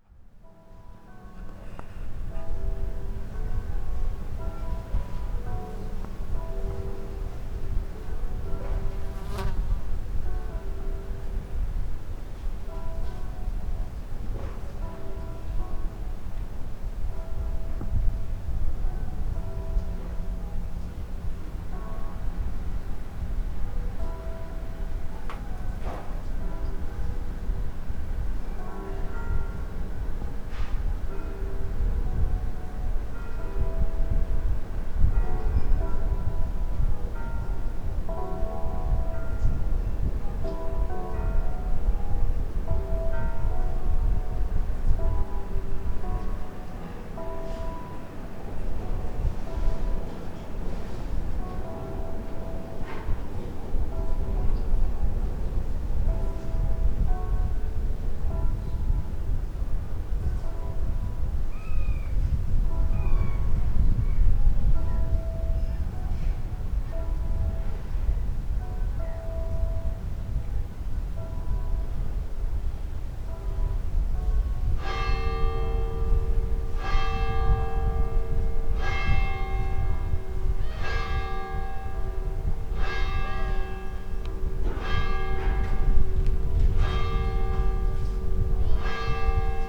Corniglia, north observation deck - morning bells
(binaural) church bells from different villages echoing over the mountains.
Corniglia SP, Italy, 6 September 2014, 08:04